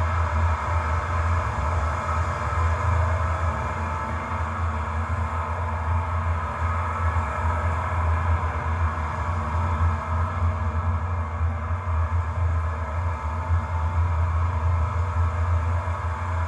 metal railing in Tampere Finland 2